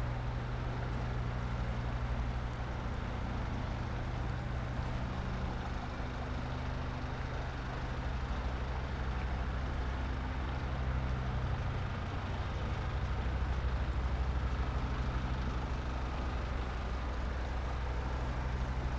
Lithuania, Vilnius, at the river
river and workers on the others side of the river